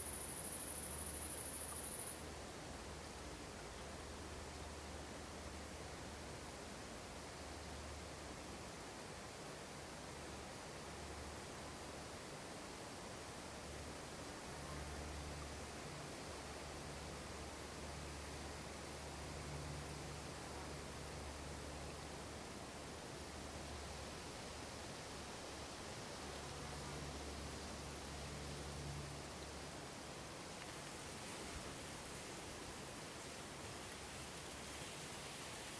quiet lake
lake rängen near stafsäter, summer day.
stafsäter recordings.
recorded july, 2008.